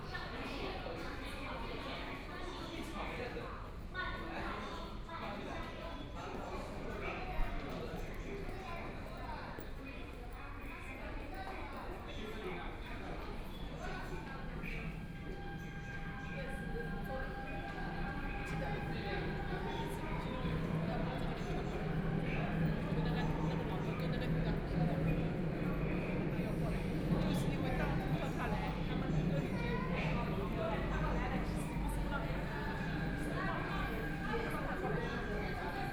South Shaanxi Road Station, Shanghai - On the platform
On the platform waiting for the train, Voice message broadcasting station, Binaural recording, Zoom H6+ Soundman OKM II
Shanghai, China